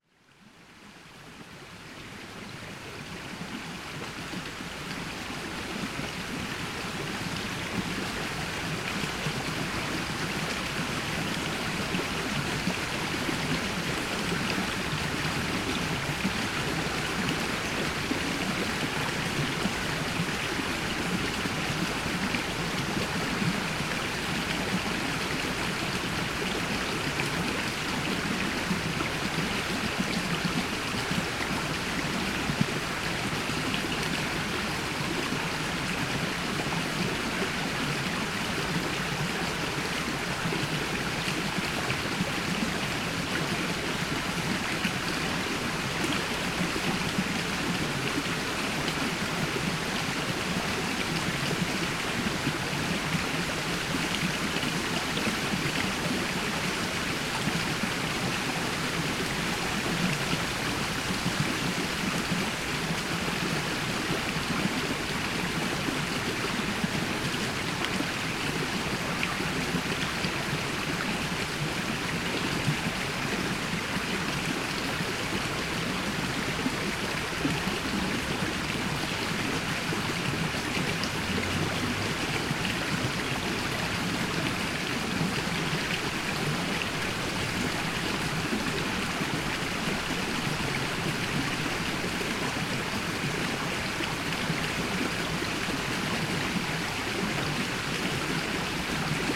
Mizarai, Lithuania, little river flows into Nemunas
some little river running into the biggest lithuanian river Nemunas
Alytaus apskritis, Lietuva, 9 September 2022